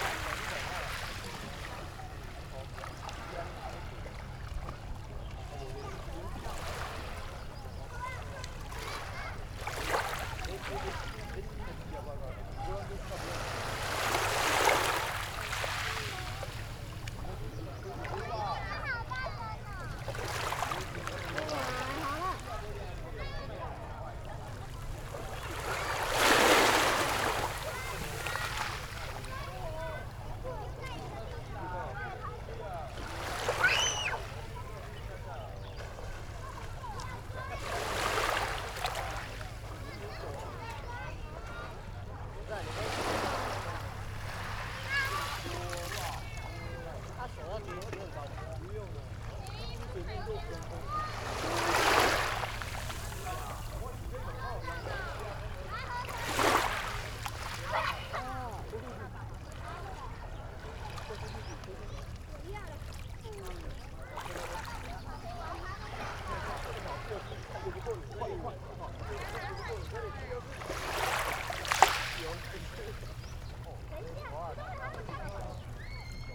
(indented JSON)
{
  "title": "豆腐岬, Su'ao Township - Sound of the waves",
  "date": "2014-07-28 16:31:00",
  "description": "Sound of the waves, At the beach, Tourist, Birdsong sound\nZoom H6 MS+ Rode NT4",
  "latitude": "24.58",
  "longitude": "121.87",
  "altitude": "13",
  "timezone": "Asia/Taipei"
}